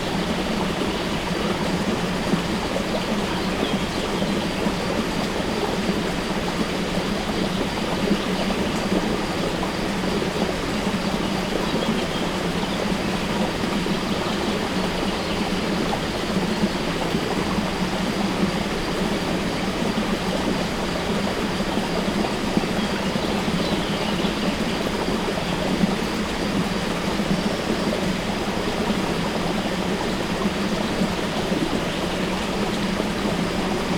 Zatolmin, Tolmin, Slovenia - Source of river Tolminka
Water from source of river Tolminka flowing in a stream, birds
Recorded with ZOOM H5 and LOM Uši Pro, Olson Wing array.